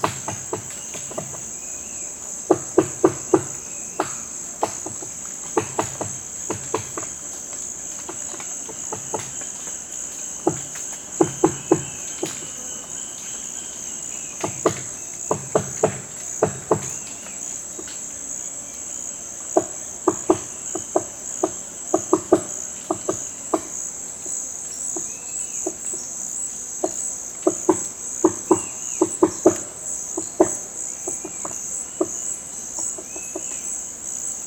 Tauary (Amazonian Rainforest) - Woodpecker in the amazonian rainforest
A small woodpecker in the morning in the forest close to Tauary (Part of the FLONA of Tefé).